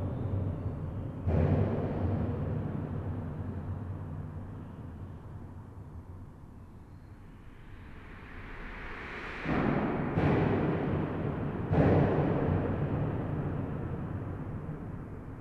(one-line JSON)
{"title": "Theux, Belgium - Inside the bridge", "date": "2018-06-22 20:30:00", "description": "Recording of the technical tunnel of the Polleur bridge : I'm not on the motorway but below, not on the bridge but inside. It's a extremely noisy place, especially when trucks drive on the expansion joint ; moreover elastomer padding are missing.", "latitude": "50.54", "longitude": "5.88", "altitude": "244", "timezone": "Europe/Brussels"}